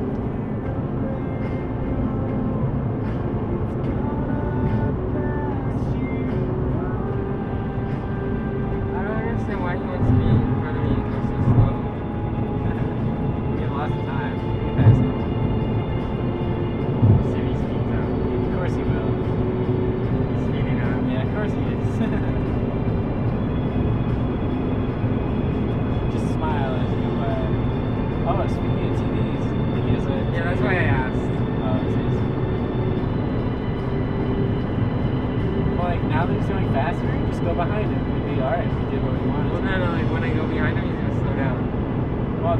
Acura on 5
This is a spliced recording of my trip down highway 5. My friend and I encounter a man and his child in a silver Acura sedan.
Kern County, California, United States of America